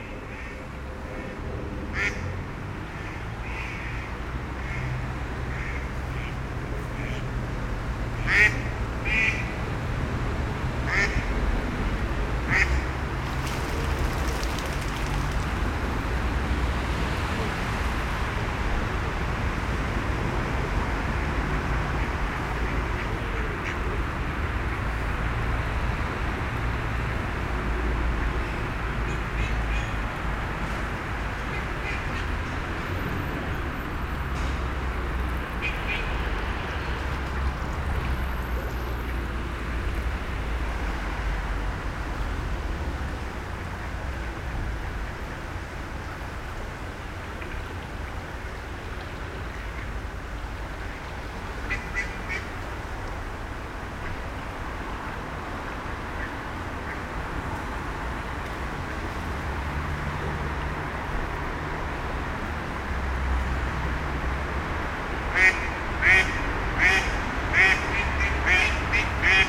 Troyes, France - Seine river in Troyes
The Seine river flowing in Troyes city is absolutely not pastoral, there's cars everywhere and dense noise pollution. We are only 10 kilometers from Clerey, where the river was a little paradise. It changes fast.